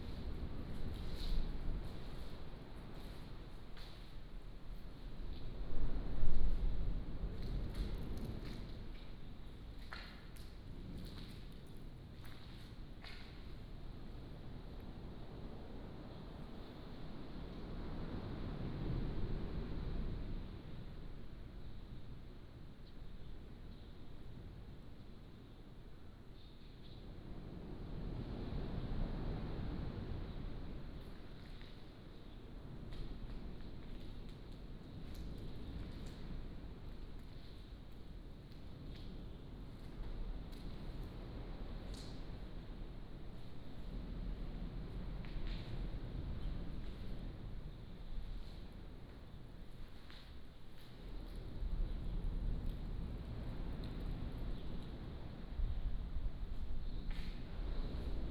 Abandoned waiting room, Small village, Sound of the waves